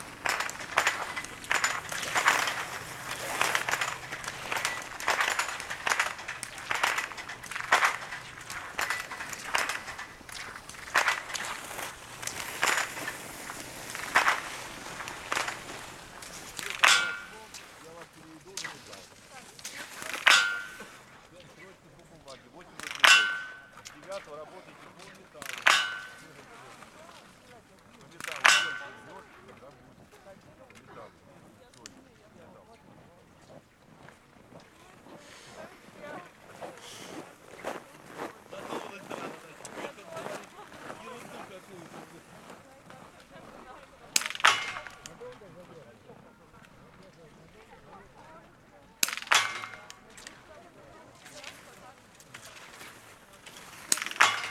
Demino, Russia, Biathlon complex - Shooting routine
Here near the Demino village biathlon complex find itself at the great river of Volga. Cross-country ski fiesta in a beautiful place for everyone. The recording depicts a warm-up shooting before biathlon competition for juniors. Just listen to those early reflections of the shots in a snow situation. It is a honey!
Recorded on Zoom H5 built-in X/Y stereo microphone by hand.
Центральный федеральный округ, Россия, 8 January, 12:00